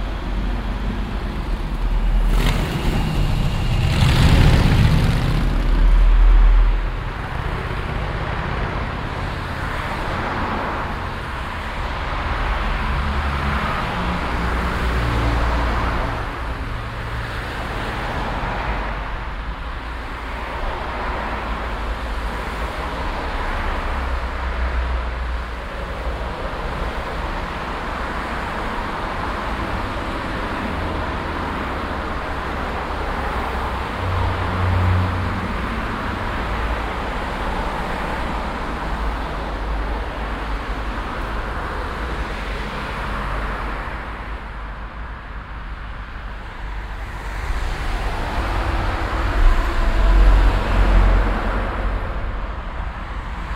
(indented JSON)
{
  "title": "cologne, south rheinuferstraße, traffic - cologne, sued, rheinuferstraße, verkehr",
  "date": "2008-05-21 16:03:00",
  "description": "morgendlicher verkehr an der rheinuferstrasse - hier unterbrochen durch ampel\nsoundmap: cologne/ nrw\nproject: social ambiences/ listen to the people - in & outdoor nearfield recordings",
  "latitude": "50.92",
  "longitude": "6.97",
  "altitude": "49",
  "timezone": "Europe/Berlin"
}